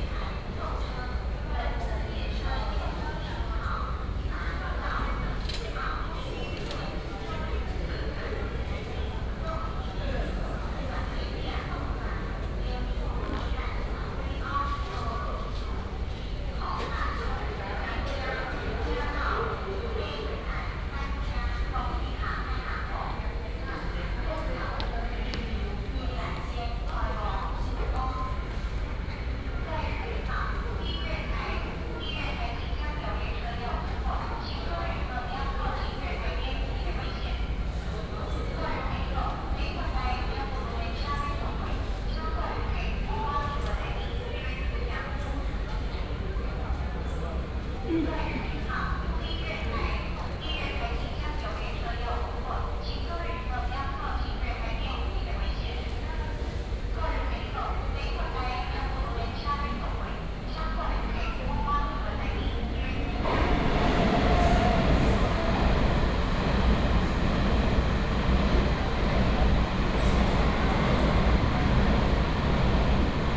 Yilan Station, Taiwan - In the station hall
Station Message Broadcast, In the station hall